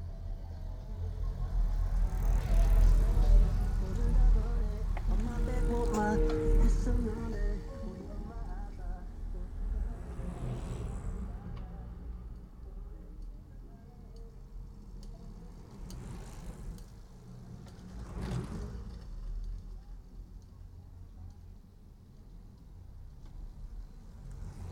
Chuncheon cycle road Chuncheon-si, Gangwon-do, South Korea - On the cycle road
In the summer the cycle road surrounding Chuncheon is very well used. Some stretches of the course are elevated wooden paths that run over the water around a series of low cliffs.
19 July